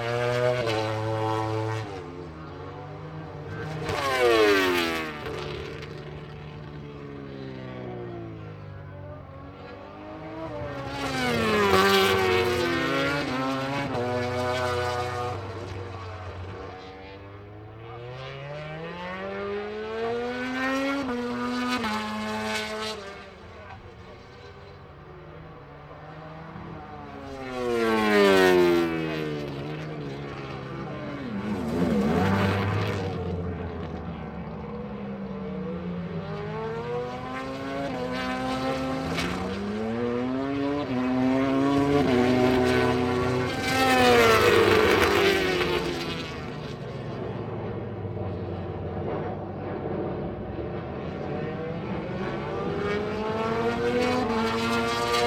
british motorcycle grand prix 2006 ... free practice 1 ... one point stereo mic to minidisk ...